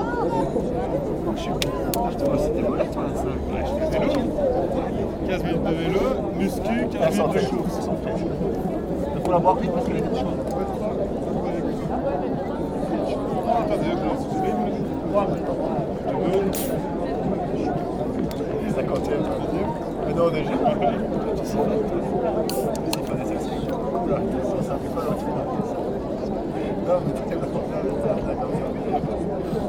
After a long winter, it's the first real strong sunshine. I was curious to see the lake beach and make the detour. It was full of students taning, joking and drinking warm bad beers. This sound is an overview of the area.